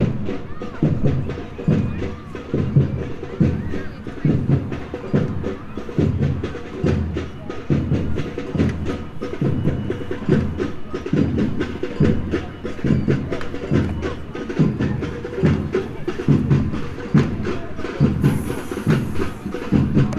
Chesterfield, Queens Park
Drumming during Garden of Light event in Queens Park in Chesterfield